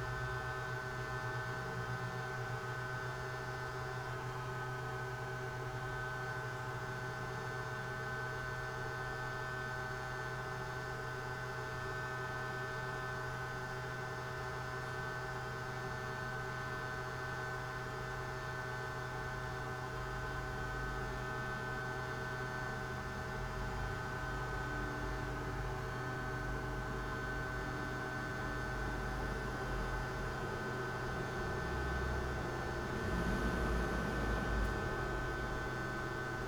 Poznan, underground parking lot - garage ambience
sound ambience in the underground parking lot in apartment building. a power box resonates the whole area. water flowing sewage pipes, hum form ventilation ducts. at the end of the recording there is a noticeable crack. it's crack of cartilages in my ankle when i moved my foot :)
Poznań, Poland, 12 August 2012, 14:23